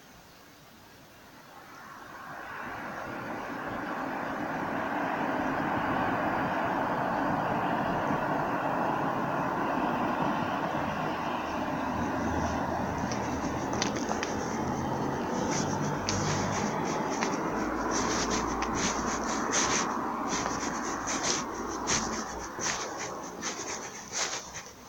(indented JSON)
{
  "title": "Via Fontesecco, LAquila AQ, Italia - a little walk during 2020 lockdown-04-01-2020",
  "date": "2020-04-01 19:20:00",
  "description": "The bridge where i made this recording is still closed after the earthquake of 2009 in L'Aquila. Many people where doing little walks around there during the lockdown, but that day i was totally alone, so baiscally what you hear are my footsteps, some wind and a couple of cars moving in the streets under the bridge",
  "latitude": "42.35",
  "longitude": "13.39",
  "altitude": "695",
  "timezone": "Europe/Rome"
}